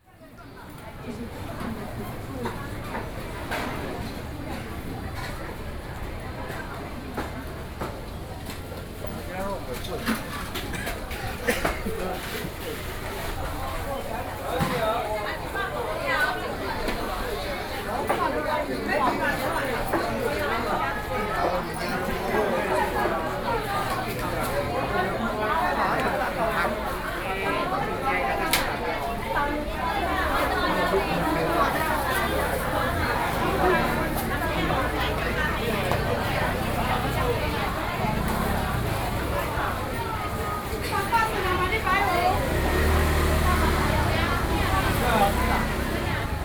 Lane, Section, Sānhé Rd, Sanzhong District - Traditional markets
New Taipei City, Taiwan